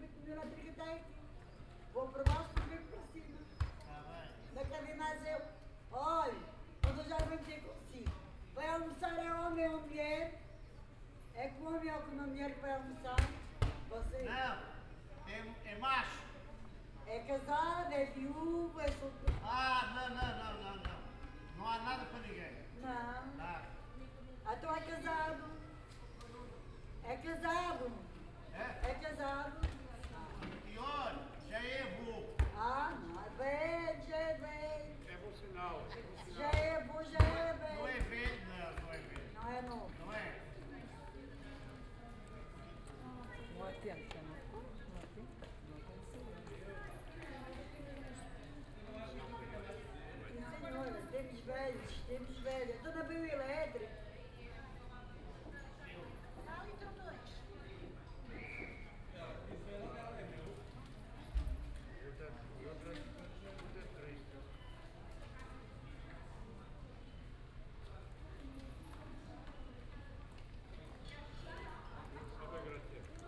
Lisbon, Escolas Gerais - street corner
conversation on the street, tram 28 arriving, departure. binaural, use headphones
Lisbon, Portugal, 3 July, ~12:00